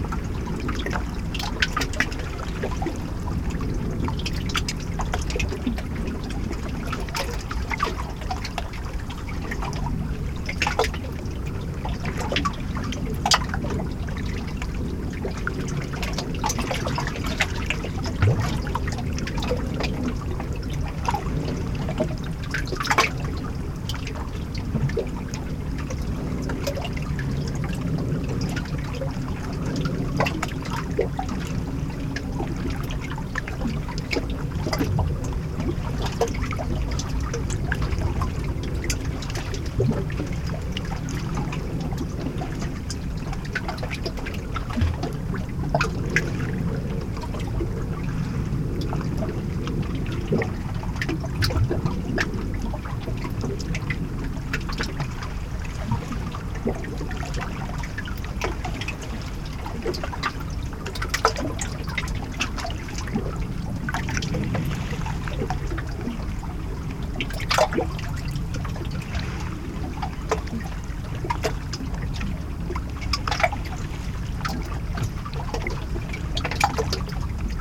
Avenue Gustave Doret, Lausanne, Suisse - Le lac Léman la nuit, devant le Théâtre de Vidy à Lausanne
Enregistrement binaural: à écouter au casque.
Binaural recording: listen with headphones.
Schweiz/Suisse/Svizzera/Svizra, 6 January